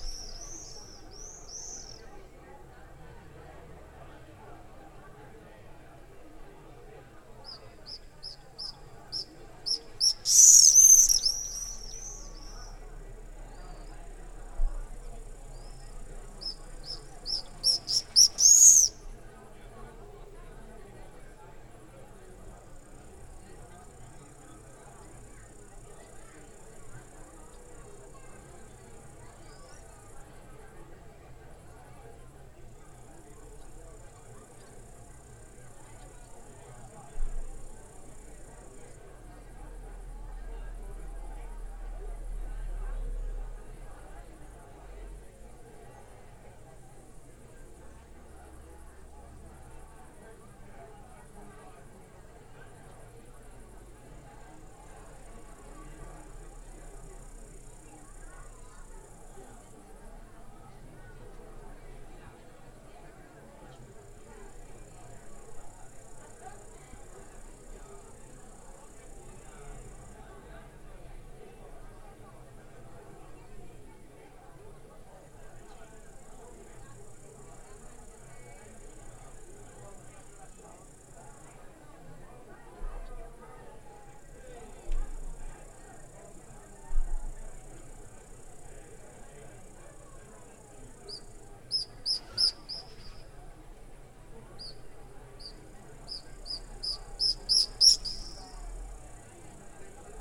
Swallows buzz the bell-tower :: Topolò UD, Italy
On several clear summer evenings I witnessed flights of swallows circling the valley and doing hard turns against the wall of the church bell-tower...no doubt in some sort of joyful game or show of stamina...the hard, flat wall of the church returned their cries...